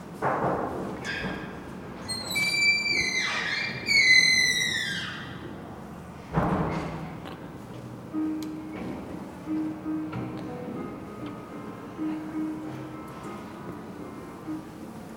Couloir du Bâtiment, collège de Saint-Estève, Pyrénées-Orientales, France - Couloir du Bâtiment 1. Chorale
Dans le couloir du bâtiment 1, devant la salle de la chorale.
Preneur de son : Thierry.
February 2011